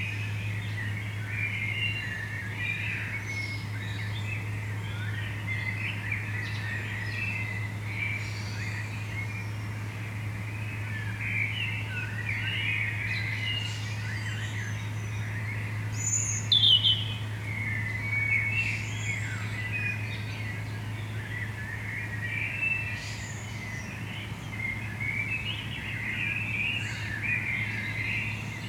Stadtwald, Essen, Deutschland - essen, amselstrasse, early morning bird scape
Frühmorgens auf einem Balkon. Die Klänge der erwachenden Vögel und im Hintergrund der Klang der naheliegenden Autobahn. Ausschnitt einer längeren Aufnahme freundlicherweise für das Projekt Stadtklang//:: Hörorte zur Verfügung gestellt von Hendrik K.G. Sigl
On a balcony of a private house in the early morning. The sounds oof the awakening birds and the traffic from the nearby highway.
Projekt - Stadtklang//: Hörorte - topographic field recordings and social ambiences
Essen, Germany, 12 July 2013